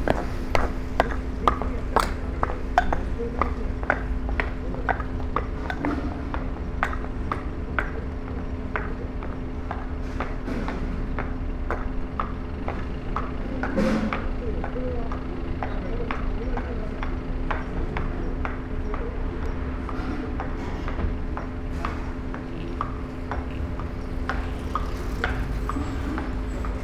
Kamigyo Ward, Kyoto - wooden clogs